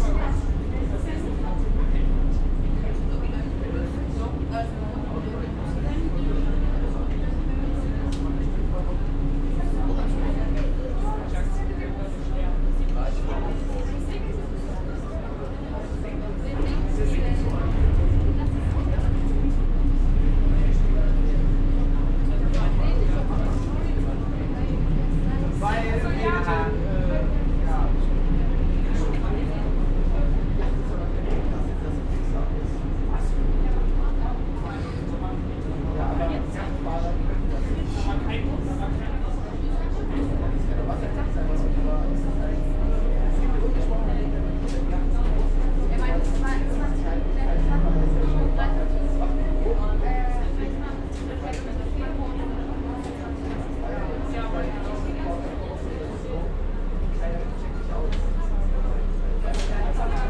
Espace Médical les Marines, Grosseto-Prugna, France - the boat 01
Welcom on board
Captation ZOOM H6
28 July 2022, ~18:00